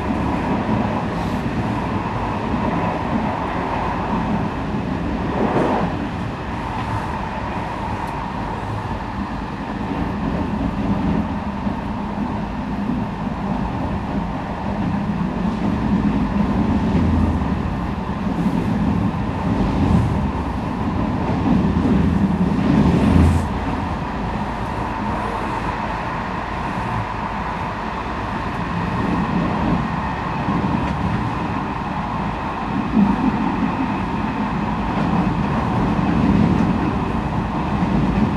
Nantes-Lyon by train
Minidisc recording from 2000, january 1st.
Thouaré-sur-Loire, France, 1 January 2000, 11:23